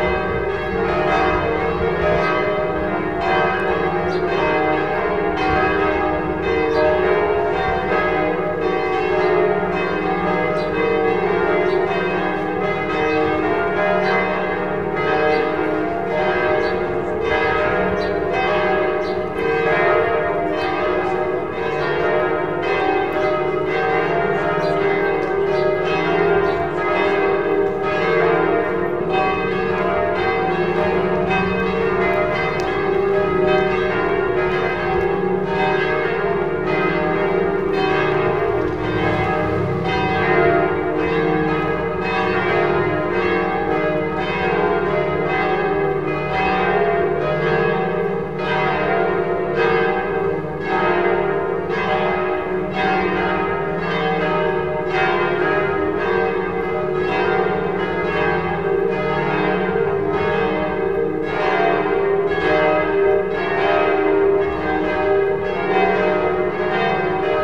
the sunday bells of the church recorded from outside - in the background some traffic and approaching people
international cityscapes - topographic field recordings and social ambiences